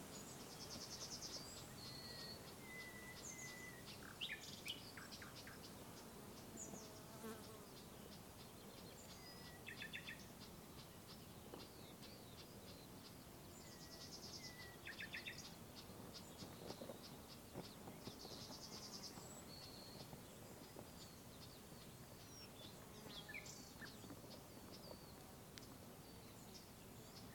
A little impression of the nature sounds in Grenzdorf, a little village next to the polish-german border. Birds, insects, the wind just doin their thing in this peaceful landscape, far away from the hectics and the chaos of this world...
Mecklenburg-Vorpommern, Deutschland, 13 June 2020